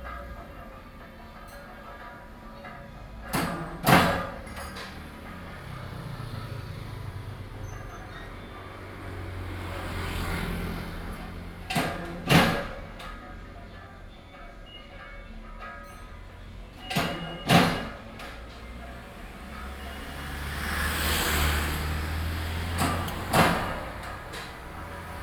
Sec., Xiwan Rd., Xizhi Dist. - a small factory
In a small factory next to the road
Binaural recordings
Sony PCD D50